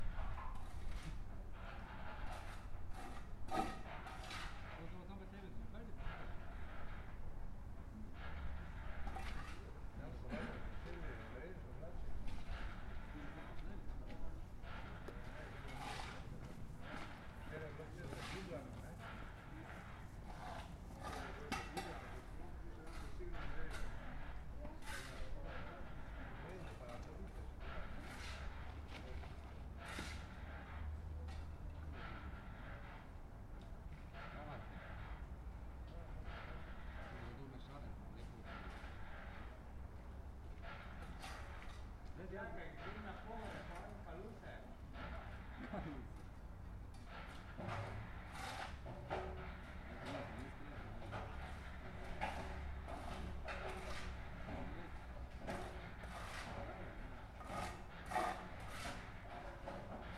Puškinova ulica, Maribor, Slovenia - corners for one minute
one minute for this corner - puškinova ulica 3